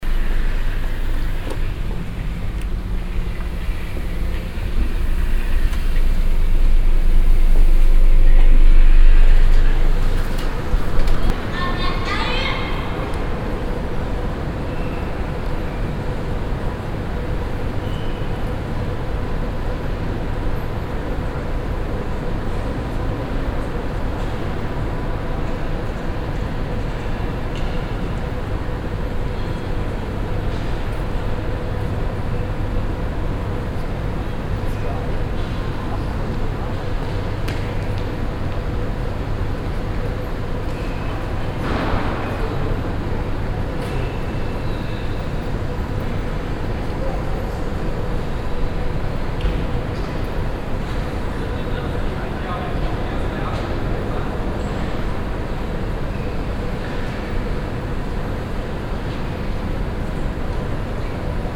cologne, mediapark, cinedom, foyer
drehtür in foyer der kinoanlage, rolltreppenmechanik und lüftungen, stimmen in grosser halle, nachmittags
soundmap nrw:
projekt :resonanzen - social ambiences/ listen to the people - in & outdoor nearfield recordings